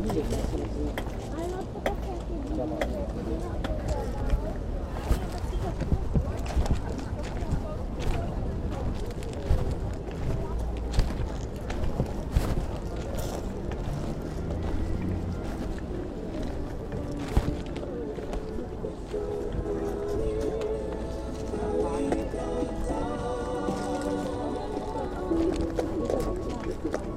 Flohmarkt Mauerpark, Berlin
Weg von der gegenüberliegenden Straßenseite zum Eingang des Flohmarktes. Straßenmusiker -> Sopransaxophon mit playback aus Brüllwürfel
Berlin, Germany